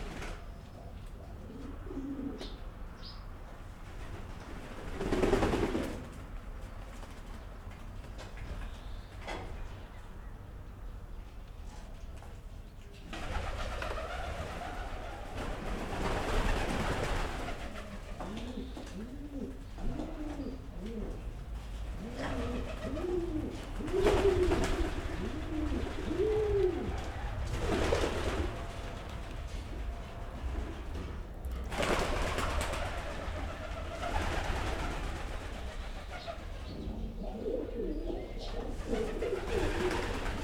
mooste, estonia, pidgeons in a abandoned farm building
Põlvamaa, Estonia, 23 April